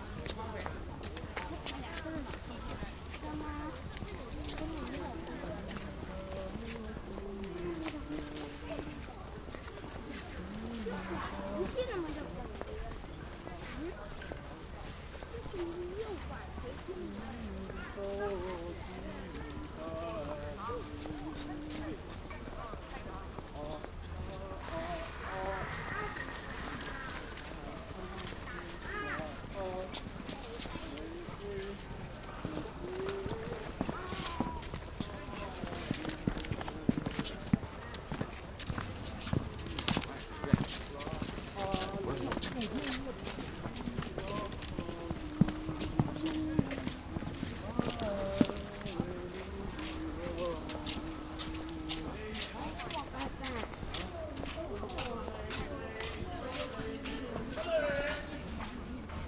beijing, temple of heaven, parkeingang
beijing cityscape - park entree temple of heaven, afternoon
international city scapes - social ambiences and topographic field recordings